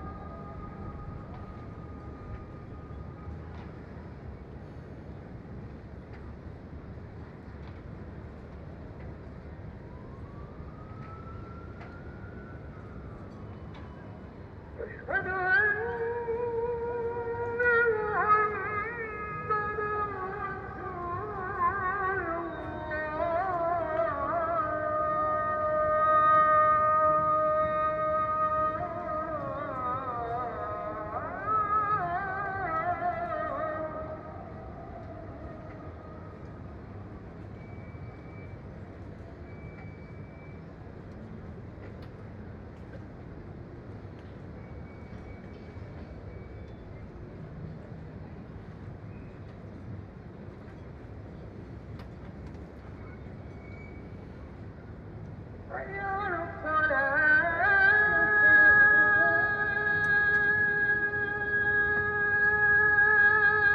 Marina Kalkan, Turkey - 915b Muezzin call to prayer (late evening)
Recording of a late evening call to prayer.
AB stereo recording (17cm) made with Sennheiser MKH 8020 on Sound Devices MixPre-6 II.
21 September 2022, 20:30